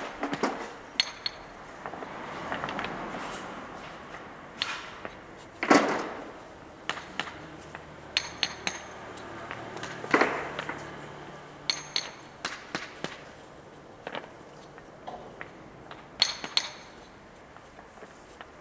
Pavia, Via G da Ferrara, Italy - men at work on the pebbles street pavement
Street pavement downtown in Pavia are made of river pebbles. Three workers here are building the pavement: one selects the best rounded stones fron a pile, puts them on a barrow and unloads on the ground, the other two gently dab the pebbles on the soil with small hammers and level them to the ground. The gentle sound created by this rhyhtmic work gives an idea of the patience required
October 2012